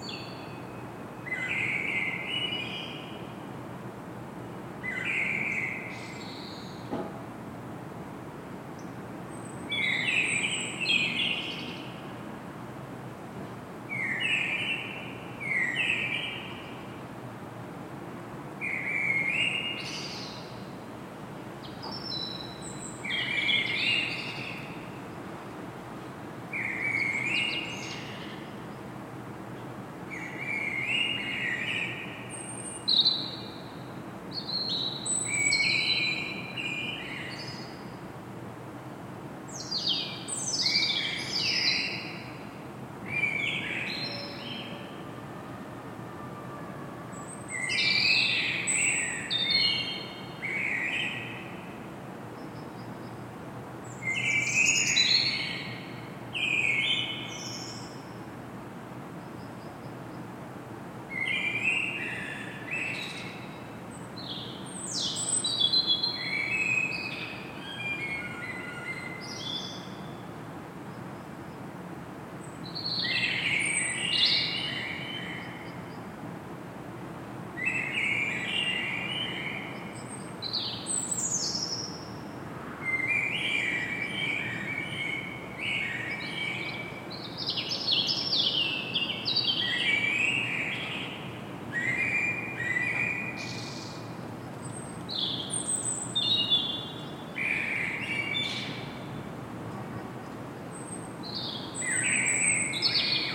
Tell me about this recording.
Tech Note : Sony PCM-D100 internal microphones, wide position.